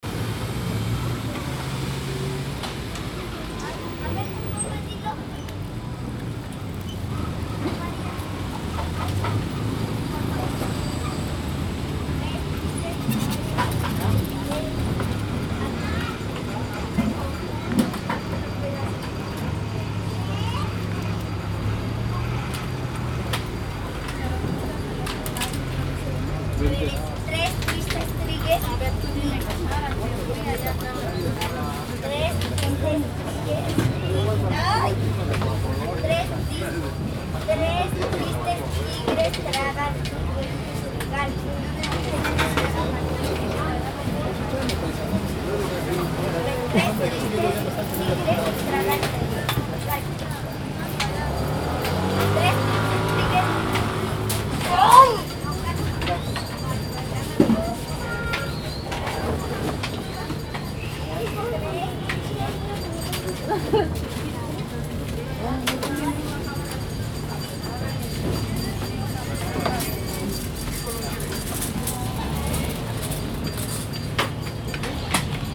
Girl having fun with tongue twisters!